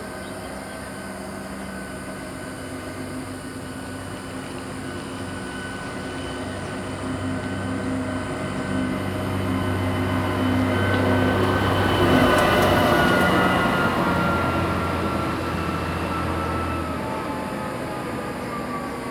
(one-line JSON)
{"title": "桃米巷, 桃米里, Puli Township - Birds singing", "date": "2015-09-03 07:02:00", "description": "Birds singing, Traffic Sound\nZoom H2n MS+XY", "latitude": "23.94", "longitude": "120.93", "altitude": "466", "timezone": "Asia/Taipei"}